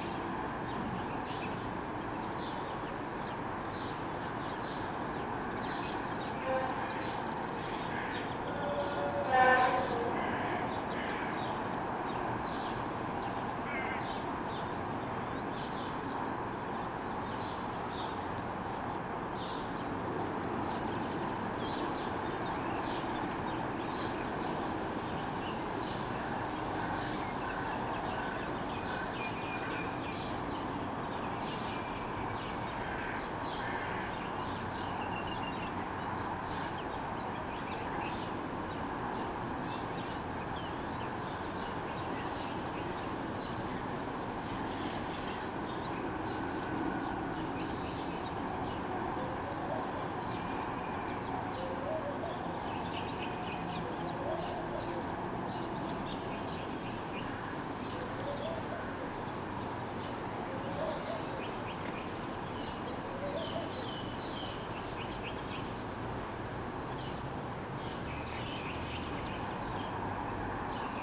Holon, Israel - From the Window at Jessy Cohen Holon
Just another day at Jessy Cohen, Holon, Israel